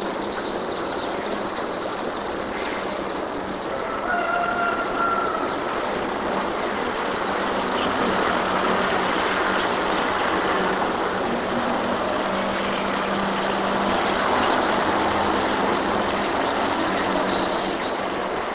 Girardot, Cundinamarca, Colombia - Amanece Girargot 5:45 am
Recorder placed in front of my window.